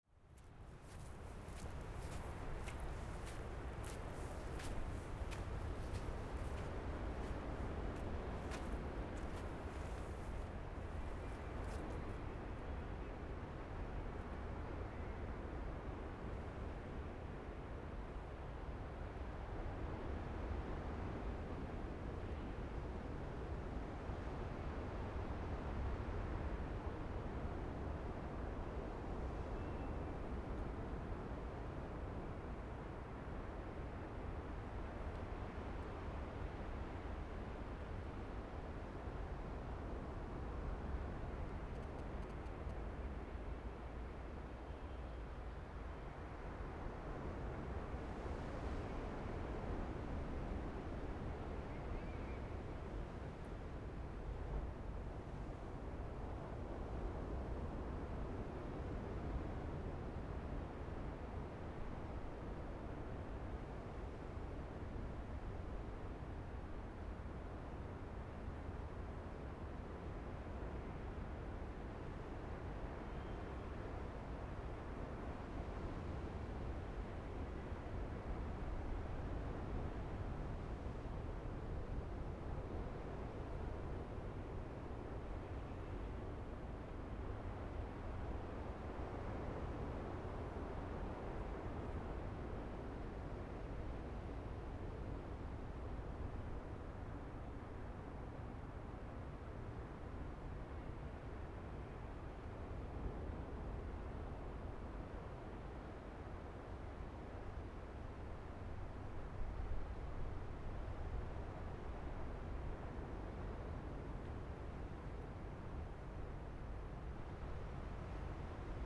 台東縣台東市 - The beach at night
Sitting on the beach, The sound of the waves at night, Zoom H6 M/S